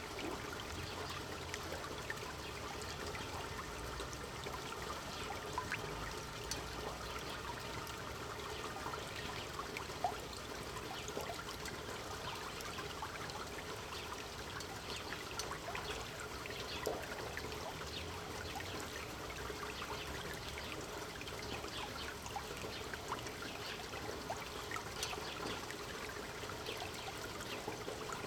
Rhöndorf, Löwenburgstr. - offener Abwasserkanal / open canal
07.05.2009 Rhöndorf, überirdisch geführte Wasserableitung am Strassenrand, vermutlich vom über dem Dorf gelegenen Drachenfels bzw. umliegenden Bergen / open canal at street level, clean water, probably from the Drachenfels and other surrounding mountains